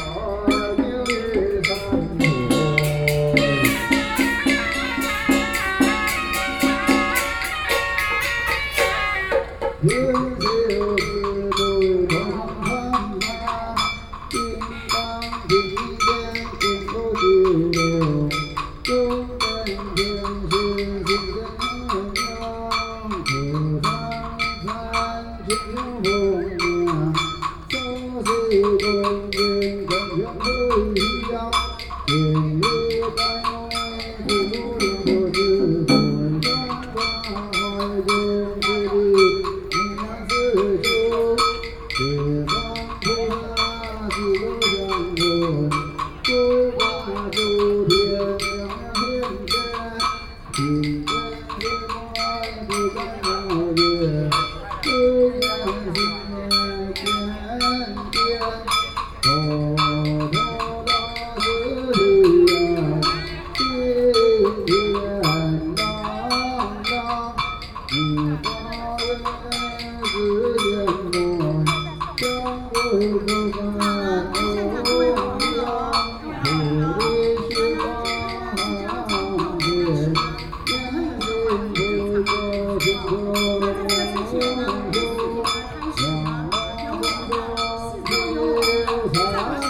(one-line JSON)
{"title": "Taipei City Hakka Cultural Park - Hakka Culture Traditional Ceremony", "date": "2013-10-19 16:06:00", "description": "Hakka Culture Traditional Ceremony, Binaural recordings, Sony PCM D50 + Soundman OKM II", "latitude": "25.02", "longitude": "121.52", "altitude": "12", "timezone": "Asia/Taipei"}